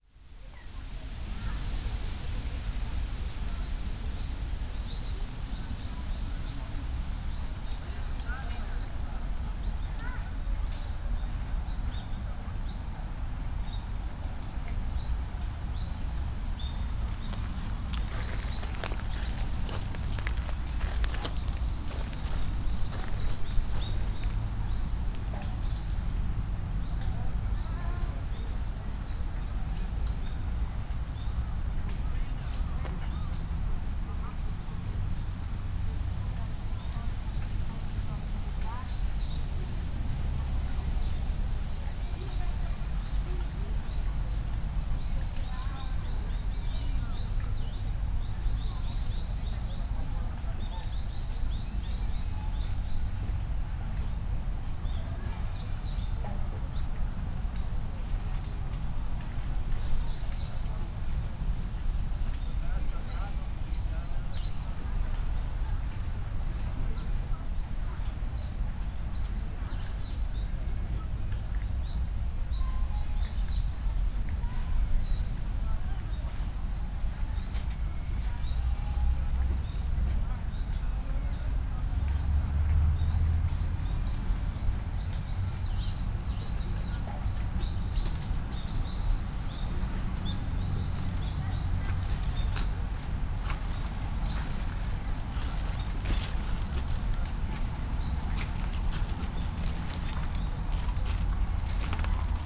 pond, Ryōan-ji garden, Kyoto - kaki
gardens sonority
wind in trees, birds, steps, gravel path